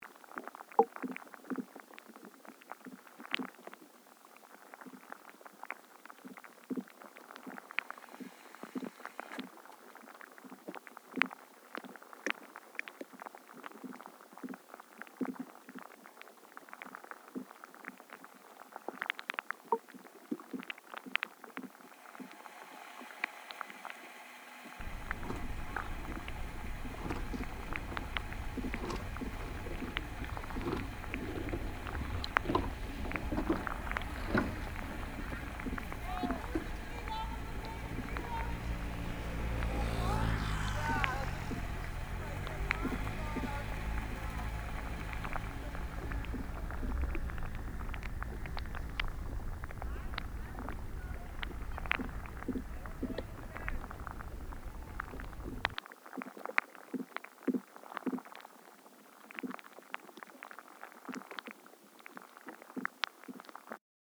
Kanaleneiland Utrecht, The Netherlands - hydro + boat
hydrophones & stereo microphone
17 June 2014